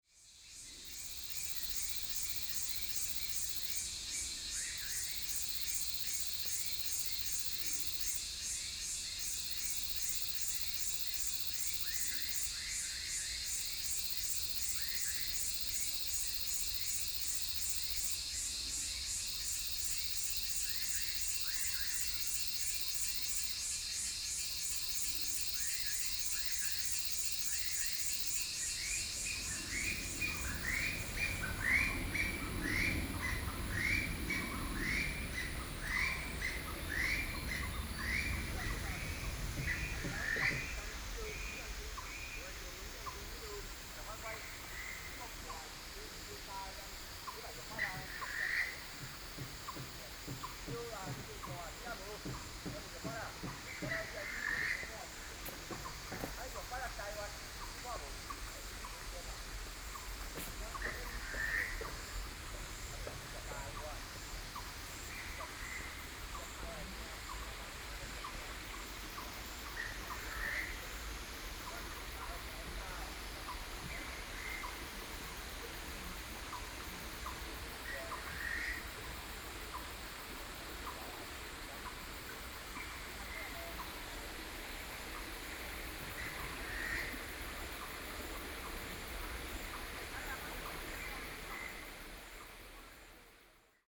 Menghu Rd., Xizhi Dist., New Taipei City - Birds singing
Birds singing, Frog calls, Cicada sounds, The aircraft passing through, Binaural recordings, Sony PCD D50 (soundmap 20120716-24,25,27)
New Taipei City, Taiwan, 16 July, 08:27